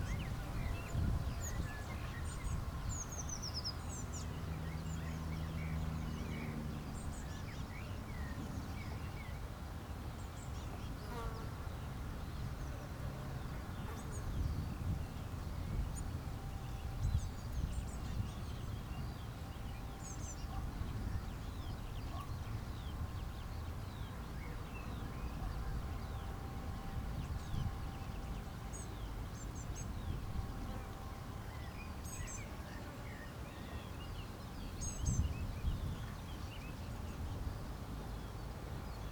Strzeszyn district, Poznan outskirts - field ambience
recorded on a dirt road around crop fields in the outskirts of Poznan. Mellow morning summer ambience. Some distant reflections of construction works. Flies buzzing by. (sony d50)